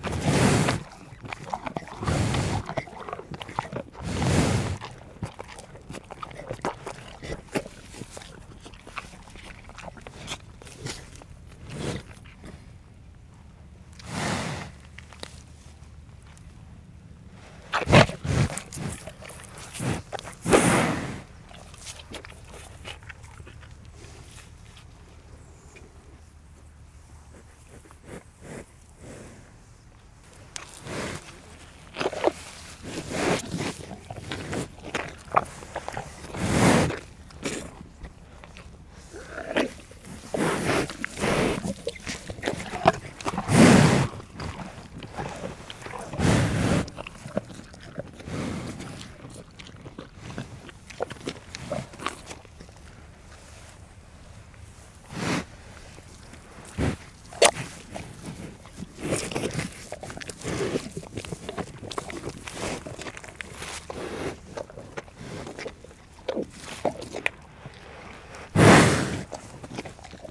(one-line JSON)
{"title": "Yville-sur-Seine, France - Cow eating", "date": "2016-09-17 17:30:00", "description": "A cow is eating apples we give. Gradually this cow is becoming completely crazy, as it likes apples VERY much. When we went back to the travel along the Seine river, this poor cow was crying loudly !", "latitude": "49.40", "longitude": "0.87", "altitude": "9", "timezone": "Europe/Paris"}